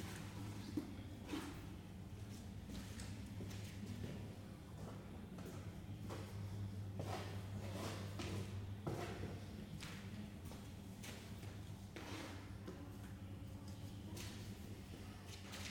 La Friche - Spatioport / Tentative - Simka1
Simka1 : Projet Ampful
Rheims, France, 20 December, 18:14